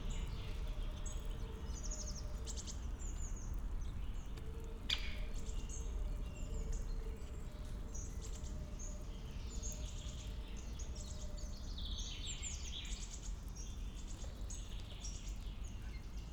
source of the river Wuhle, light flow of water, spring forest ambience, an aircraft
(SD702, AT BP4025)